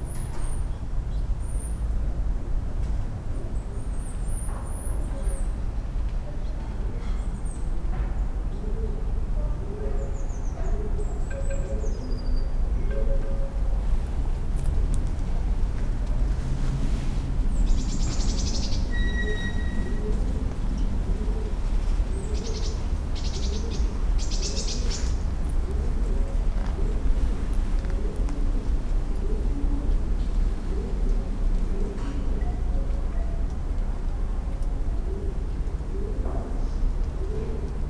Poznan, Jezyce district, Kochanowskiego - backyard
enclosed backyard of a few old apartment buildings. wooden wind chime. someone moving dishes in one of the apartments. pigeons flying around. a bit of traffic comes over the top of the buildings. (roland r-07)